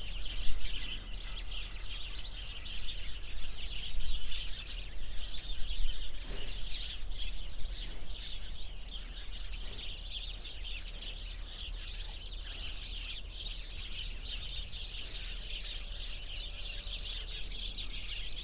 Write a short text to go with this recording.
The sounds of a bush full of sparrows nearby a cow pasture on a windy summer morning. Hupperdange, Spatzen und Kühe, Das Geräusch von einem Busch voller Spatzen nahe einer Kuhweide an einem windigen Sommermorgen. Hupperdange, moineaux et vaches, Le bruit d’un essaim de moineaux à proximité d’une prairie avec des vaches un matin d’été venteux.